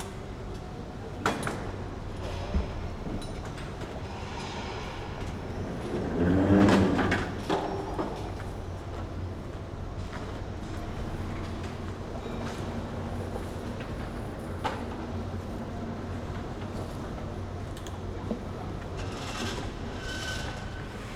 People Builing up the week market - Market
Building up the Weekly Market